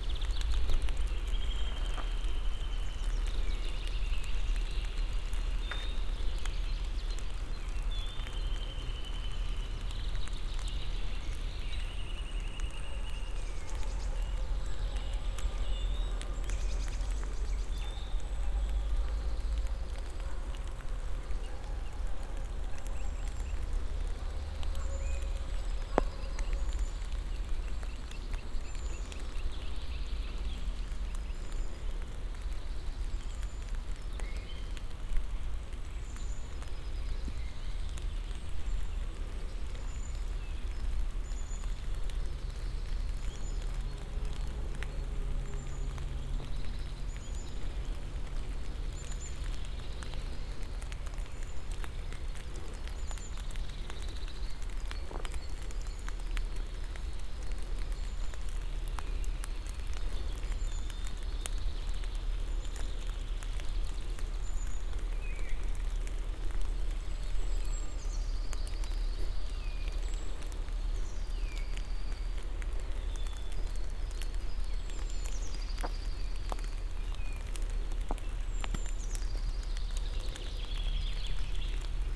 Morasko nature reserve, meadow with a fallen tree - wide ambience
(bianaural) recorded on a wide meadow. lots of place for sound to breathe and reverberate off the trees. construction sounds and barking coming from a nearby village.